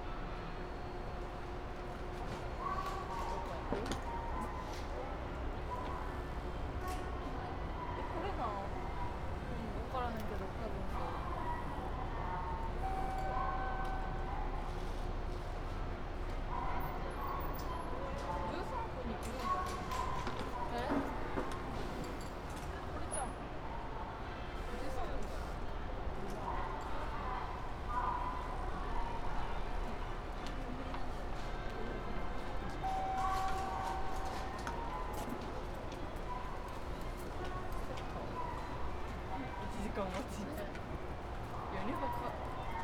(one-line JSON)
{"title": "Osaka, Chikko, near Osaka aquarium - Ferris wheel", "date": "2013-03-30 20:50:00", "description": "waiting for the bus, hum of a big Ferris wheel in the distance, music, warning bell, clang of the cabins. Two girls come by and look for departure hours at the time table.", "latitude": "34.66", "longitude": "135.43", "altitude": "7", "timezone": "Asia/Tokyo"}